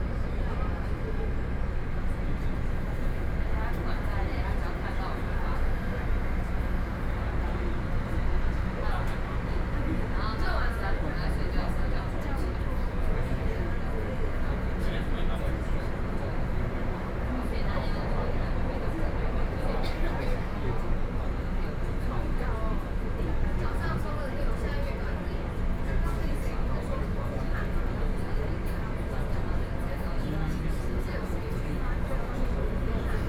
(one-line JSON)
{"title": "Fuxinggang Station - Navigation", "date": "2013-07-11 15:26:00", "description": "Navigation, Site staff are introduced to a group of children MRT, Sony PCM D50 + Soundman OKM II", "latitude": "25.14", "longitude": "121.49", "altitude": "10", "timezone": "Asia/Taipei"}